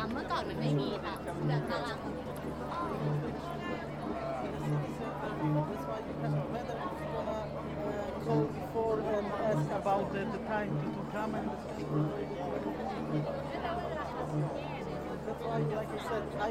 Praha 1, Czechia, Old Town Square